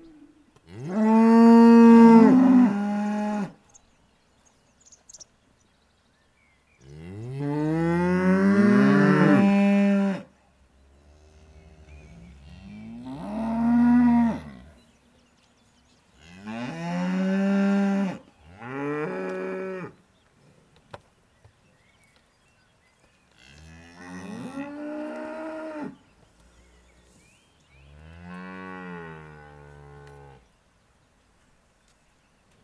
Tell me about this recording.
Near a farm, the cows are hungry. They call the farmer loudly !